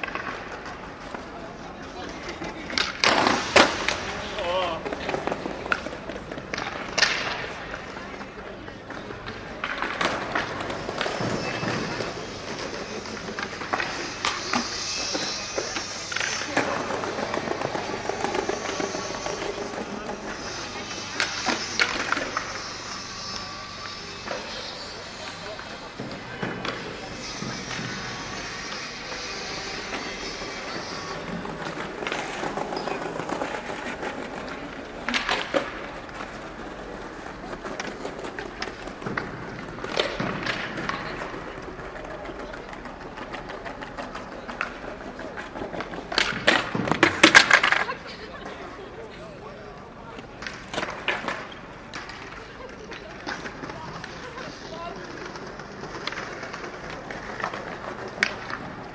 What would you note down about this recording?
skater auf der koelner domplatte, project: social ambiences/ listen to the people - in & outdoor nearfield recordings